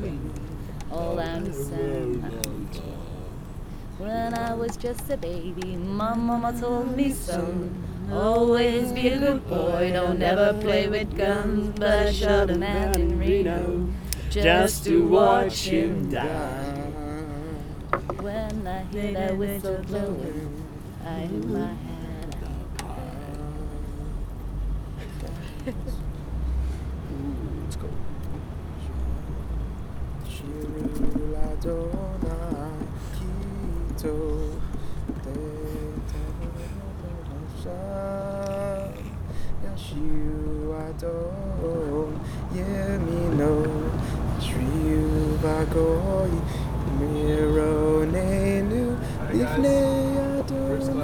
{
  "title": "Ha-Neviim St, Jerusalem, Israël - Rooftop singings, a jewish song and late night encounters",
  "date": "2014-01-27 23:39:00",
  "description": "Late night singing with some other travelers on the rooftop of Abraham Hostel; some classics, a jewish song and late night encounters. (Recorded with Zoom 4HN)",
  "latitude": "31.78",
  "longitude": "35.22",
  "altitude": "815",
  "timezone": "Asia/Jerusalem"
}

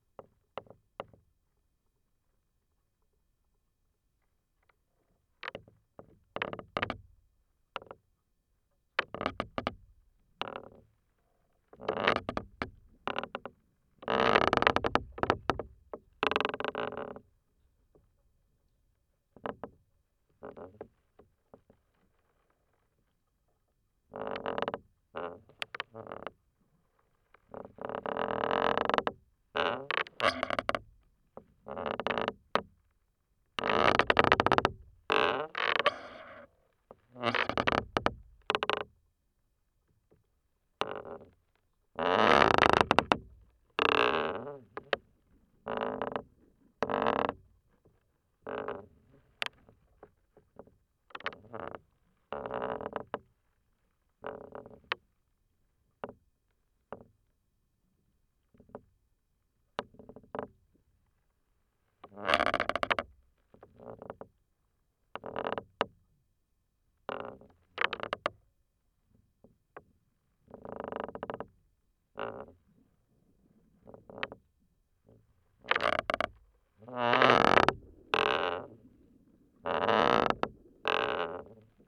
{
  "title": "workum, het zool: marina - the city, the country & me: marina, sailboat, belaying pin",
  "date": "2012-08-02 22:17:00",
  "description": "wooden belaying pin of a sailboat, contact mic recording\nthe city, the country & me: august 2, 2012",
  "latitude": "52.97",
  "longitude": "5.42",
  "altitude": "255",
  "timezone": "Europe/Amsterdam"
}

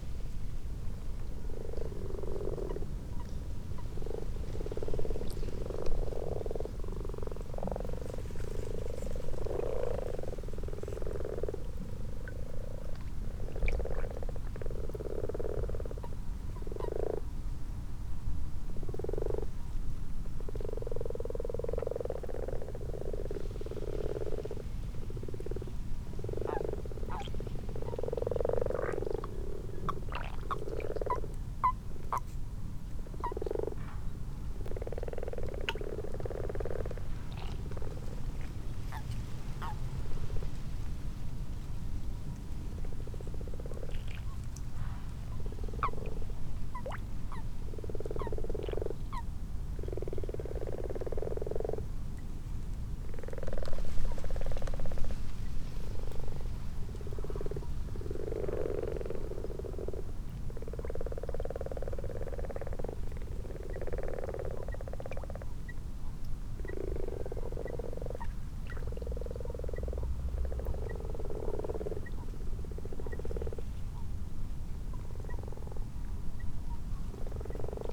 {
  "title": "Malton, UK - frogs and toads ...",
  "date": "2022-03-12 23:32:00",
  "description": "common frogs and common toads ... xlr mics to sass on tripod to zoom h5 ... time edited unattended extended recording ...",
  "latitude": "54.12",
  "longitude": "-0.54",
  "altitude": "77",
  "timezone": "Europe/London"
}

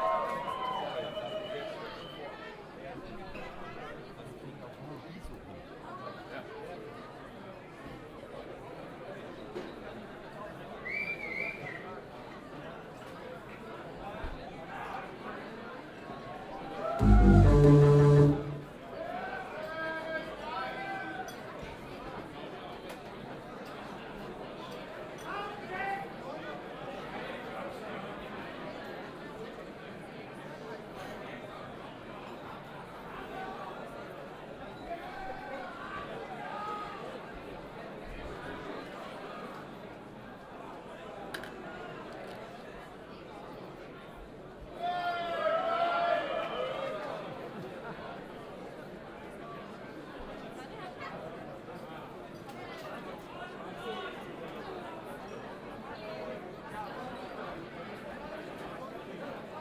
{
  "title": "cologne, apostelnstrasse, gloria, konzertbesucher - cologne, apostelnstrasse, gloria, DAF konzertbeginn",
  "date": "2008-08-15 11:59:00",
  "description": "erstaunlich diletantischet konzertbeginn der deutsch amerikanischen freundschaft (daf) auf der c/o pop 2008\nsoundmap nrw:\nsocial ambiences, topographic field recordings",
  "latitude": "50.94",
  "longitude": "6.94",
  "altitude": "55",
  "timezone": "Europe/Berlin"
}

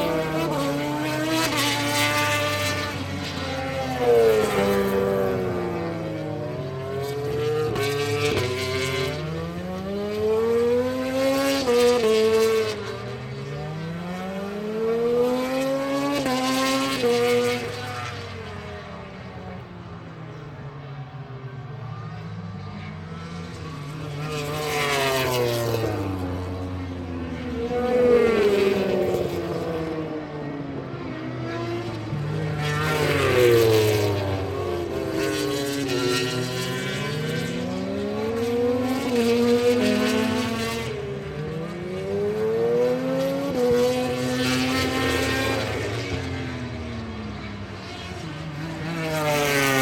{
  "title": "Donington Park Circuit, Derby, United Kingdom - british motorcycle grand prix 2007 ... motogp practice 1 ...",
  "date": "2007-06-22 10:10:00",
  "description": "british motorcycle grand prix 2007 ... motogp practice 1 ... one point stereo mic to mini disk ...",
  "latitude": "52.83",
  "longitude": "-1.38",
  "altitude": "94",
  "timezone": "Europe/London"
}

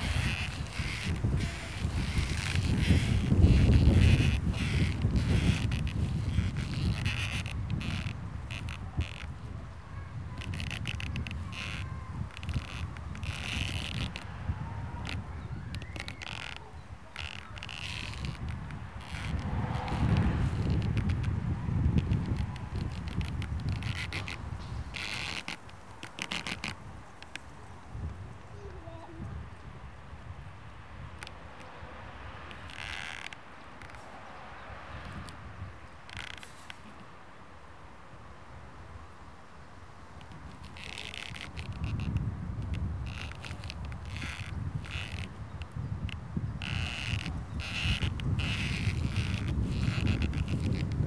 {
  "title": "Poznan, Piatkowo district, city limits - elastic band for a tree",
  "date": "2014-06-19 14:15:00",
  "description": "a tree strapped to two wooden poles with an braided elastic band. the band twitches and stretches as the tree moves in the wind.",
  "latitude": "52.46",
  "longitude": "16.90",
  "altitude": "98",
  "timezone": "Europe/Warsaw"
}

